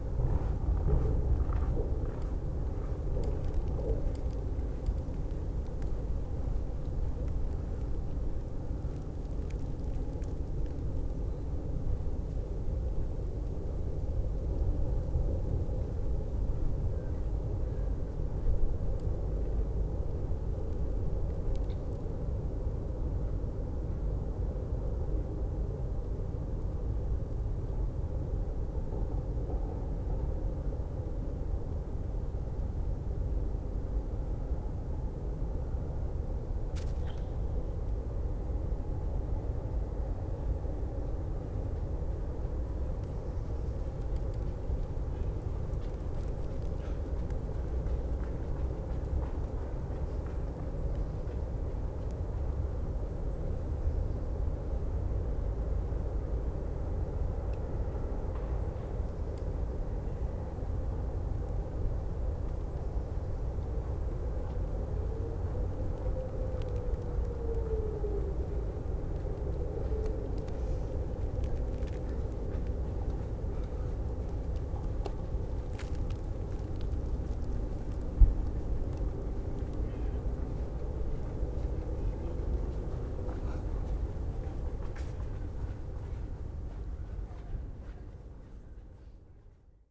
Spree, Plänterwald, Berlin - ice cracks, river side ambience
Plänterwald, Berlin, river Spree, cracking ice, cold winter Sunday afternoon
(Sony PCM D50, DPA4060)
Berlin, Germany, January 2014